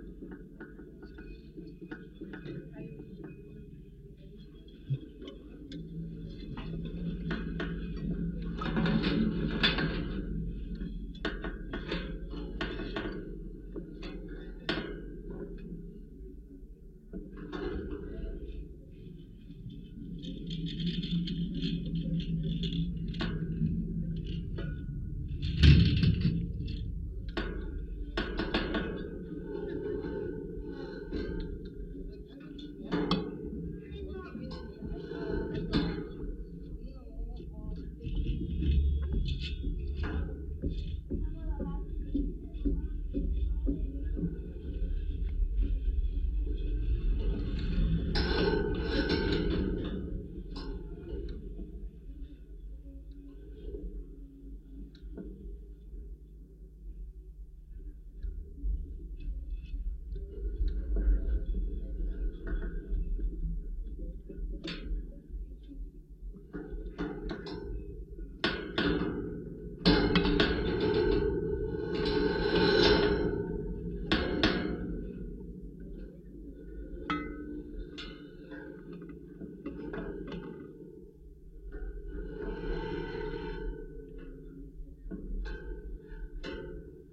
Šv. Stepono g., Vilnius, Lithuania - Construction site wire fence
Dual contact microphone recording of a construction fence. Dynamic sounds - footsteps and cars resonating through the wires, as well as sudden gushes of wind rattling the fence. Recorded using ZOOM H5.